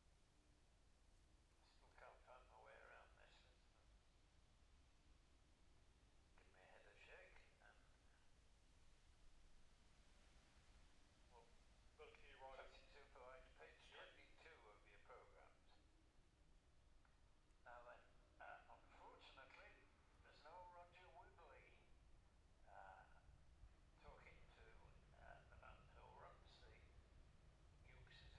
Jacksons Ln, Scarborough, UK - gold cup 2022 ... classic s'bikes ... practice ...
the steve henshaw gold cup 2022 ... classic superbikes practice ... dpa 4060s on t-bar on tripod to zoom f6 ...